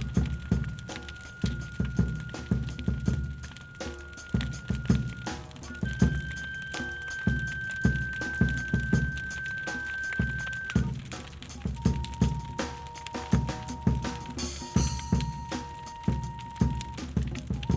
Arkhangelsk Region, Russia - Festival TAYBOLA live drums + big fire
open air festival TAYBOLA: live drums + big fire
Recorded on Rode NTG-2 + Zoom H4n.
фестиваль ТАЙБОЛА: живые барабаны и большой костер, на берегу моря
Arkhangelsk Oblast, Russia, 20 July, ~03:00